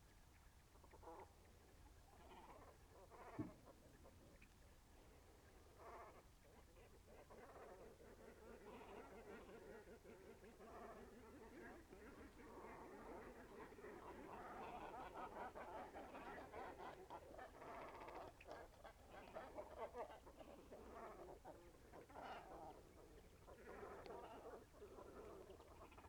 zäckericker loose/oderaue: river bank - the city, the country & me: cormorants
a group of cormorants in the trees of the headland and two bathing swans
the city, the country & me: january 1, 2015
1 January 2015, 16:06